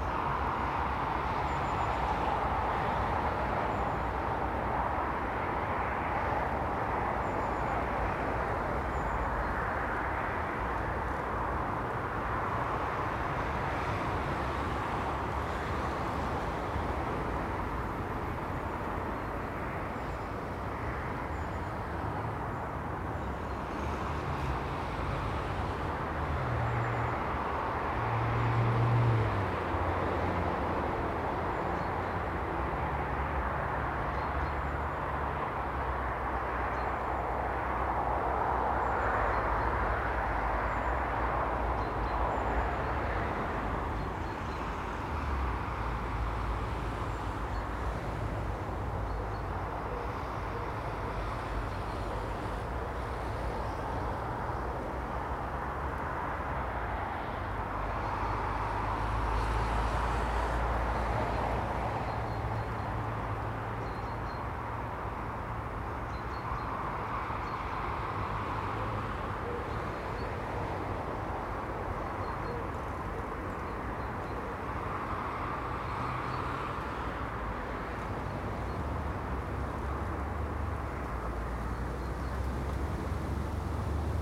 The Poplars High Street Little Moor Jesmond Dene Road Great North Road
By a hawthorn and bramble hedge
shelter from a cold southerly wind
Traffic slows and speeds
comes and goes
A lone runner
crosses the road
above
magpies follow their own map
along treetops
Footprints in the snow
tracks into the bushes
and to a hole in the fence
13 February 2021, 08:10